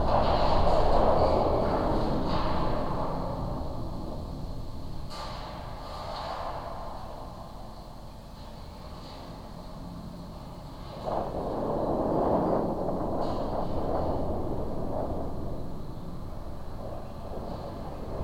Mont-Saint-Guibert, Belgique - Stairs
Wind in a metallic stairs structure, recorded with a contact microphone.
Mont-Saint-Guibert, Belgium, 10 July 2016, ~17:00